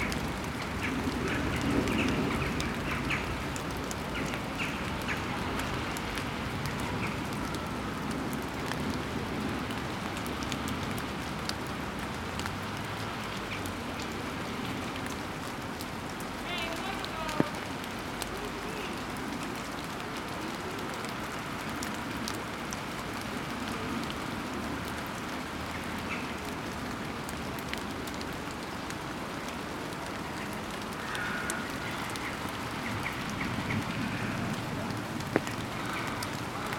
Recorded under an umbrella from above up on the hill.
Light rain.
Tech Note : Sony PCM-D100 internal microphones, wide position.